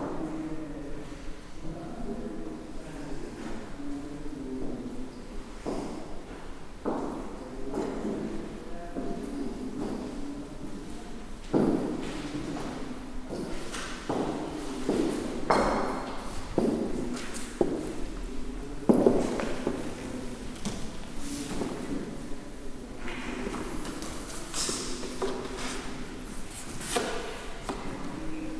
CCA, contemporary center of art. Tel Aviv.